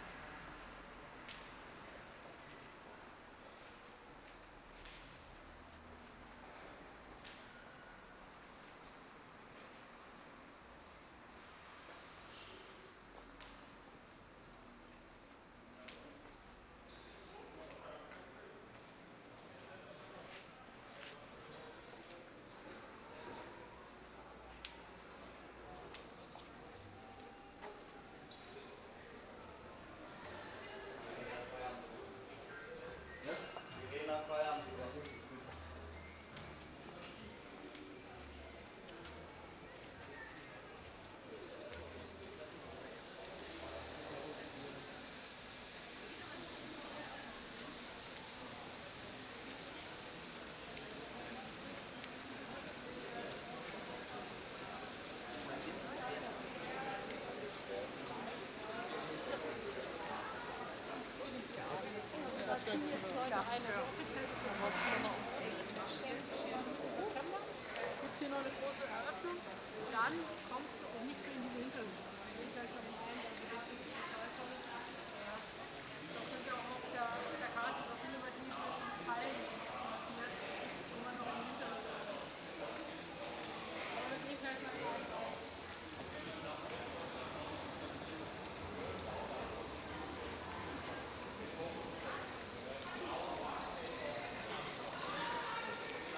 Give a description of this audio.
Walk through rather silent pathways, talk about the opening and that many yards will be closed then, a guide is talking about the new 'old town' as disneyland and the inhabitants of the city. Talking about the Hühnermarkt and Friedrich Stolze who reminds of Marx - another guide is talking about the Goethe-Haus, that is not original in a double sense and about Struwelpeter, the upcoming museum that reminds of this figure, that is 'coming back' to the 'old town', bells are tolling. Binaural recording.